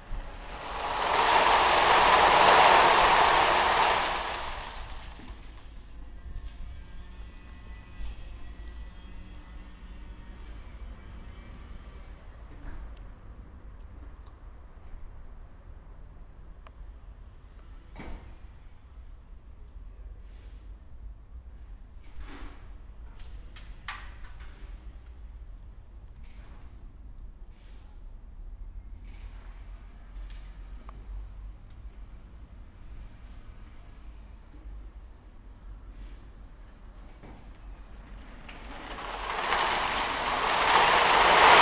cologne, thürmchenswall, rubble slide
stones sliding down a rubble slide
soundmap nrw: social ambiences/ listen to the people in & outdoor topographic field recordings
July 2009